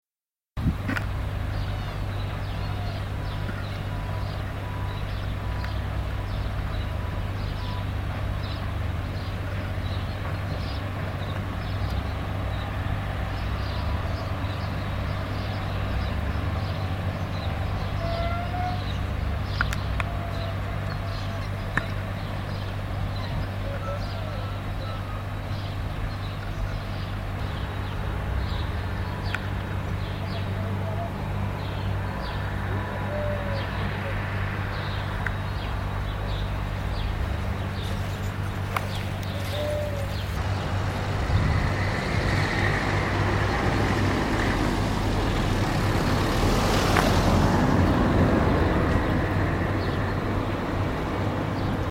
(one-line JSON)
{"title": "via Quarto, San Lorenzo, Via Quarto A San Lorenzo", "date": "2007-08-15 16:12:00", "description": "via quarto a S.Lorenzo (agosto 2007)", "latitude": "45.57", "longitude": "8.97", "altitude": "183", "timezone": "Europe/Rome"}